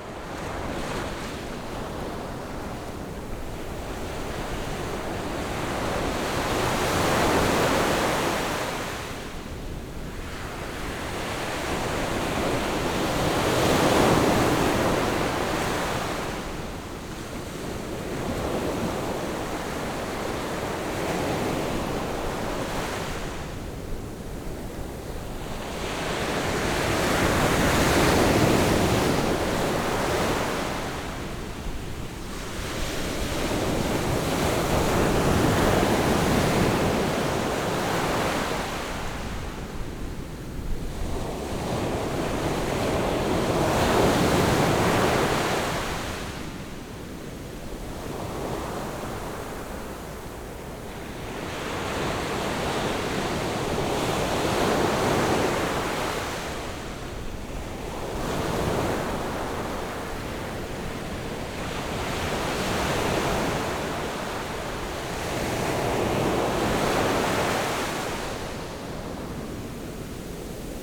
Sound of the waves
Zoom H6 MS+ Rode NT4